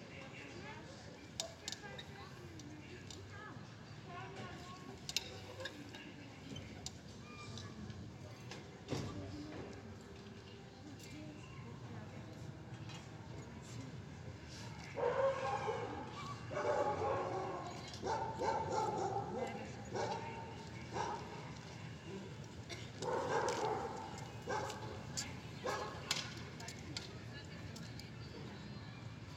{
  "title": "Cl., El Rosal, Cundinamarca, Colombia - Park Barrio Bolonia",
  "date": "2021-05-03 19:00:00",
  "description": "In this environment you can hear a park located in a middle-class neighborhood of the western savannah of Bogotá, in the municipality of El Rosal, we hear in this location people coughing, cars speeding, whistles, swings, screams, people talking, a person jogging, dogs barking, car alarms, snapping hands, laughter, children.",
  "latitude": "4.85",
  "longitude": "-74.26",
  "altitude": "2606",
  "timezone": "America/Bogota"
}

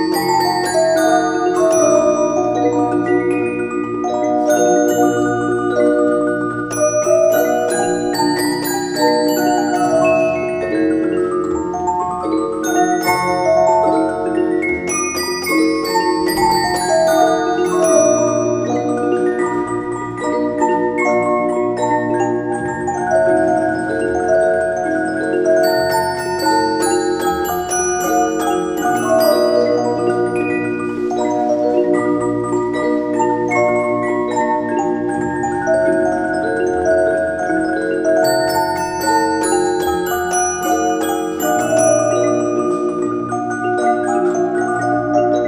{
  "title": "Freiberg, Stadtmuseum, Orchestrion in der Ausstellung",
  "latitude": "50.92",
  "longitude": "13.34",
  "altitude": "395",
  "timezone": "GMT+1"
}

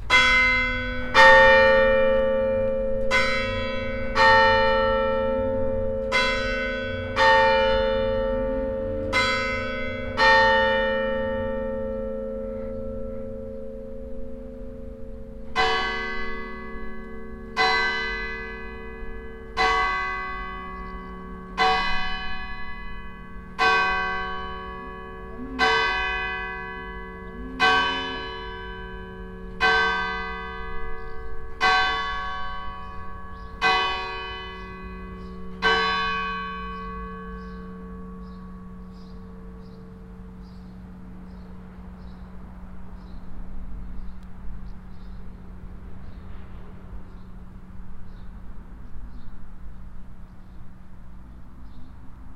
{
  "title": "heiderscheid, church, bells",
  "date": "2011-08-08 18:40:00",
  "description": "At the church on a windy, sunday summer morning. The bells ringing eleven o clock.\nHeiderscheid, Kirche, Glocken\nBei der Kirche an einem windigen Sommermorgen, Sonntag. Die Glocken läuten 11 Uhr.\nHeiderscheid, église, cloches\nL’église, un dimanche matin d’été venteux. Les cloches sonnent 11h00.\nProject - Klangraum Our - topographic field recordings, sound objects and social ambiences",
  "latitude": "49.89",
  "longitude": "5.98",
  "altitude": "513",
  "timezone": "Europe/Luxembourg"
}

